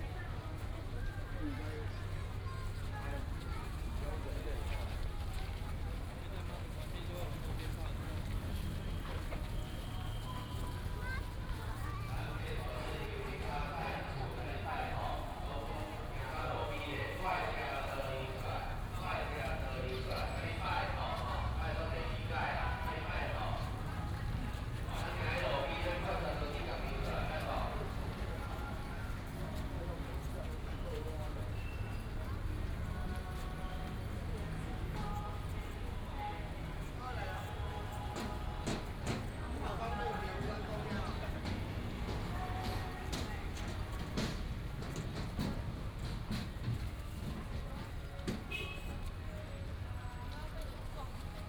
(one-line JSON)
{"title": "Zhongshan Rd., Shalu Dist., Taichung City - Firecrackers and fireworks", "date": "2017-02-27 10:04:00", "description": "Firecrackers and fireworks, Baishatun Matsu Pilgrimage Procession", "latitude": "24.24", "longitude": "120.56", "altitude": "13", "timezone": "Asia/Taipei"}